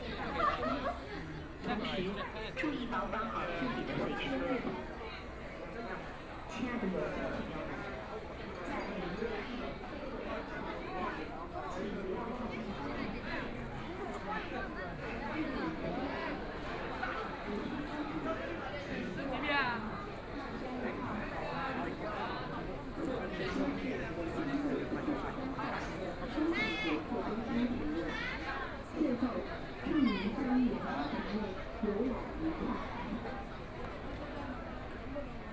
walking around the Yuyuan Garden, The famous tourist attractions, Very large number of tourists, Binaural recording, Zoom H6+ Soundman OKM II